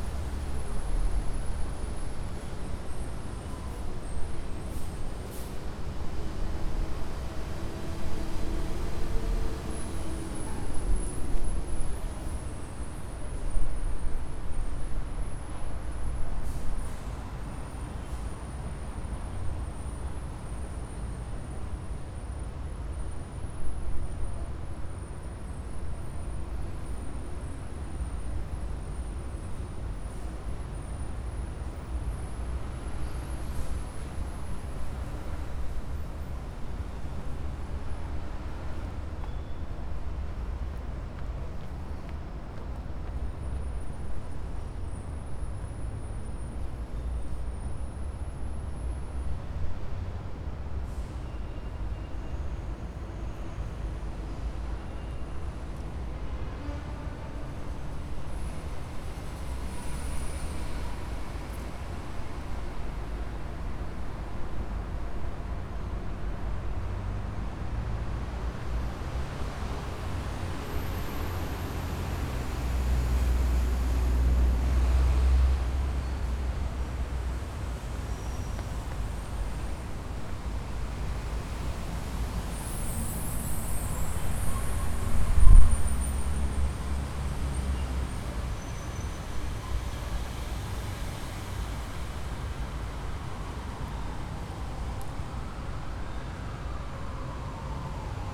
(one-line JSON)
{"title": "Parque Trianon - Tenente Siqueira Campos - Rua Peixoto Gomide, 949 - Cerqueira César, São Paulo - SP, 01409-001 - Ponte do Parque Trianon", "date": "2019-04-25 12:00:00", "description": "O áudio da paisagem sonora foi gravado na começo da ponte dentro do Parque Trianon, em São Paulo - SP, Brasil, no dia 25 de abril de 2019, às 12:00pm, o clima estava ensolarado e com pouca ventania, nesse horário estava começando o movimento dos transeuntes de São Paulo na hora do almoço. Foi utilizado o gravador Tascam DR-40 para a captação do áudio.\nAudio; Paisagem Sonora; Ambientação;Parque Trianon", "latitude": "-23.56", "longitude": "-46.66", "altitude": "831", "timezone": "America/Sao_Paulo"}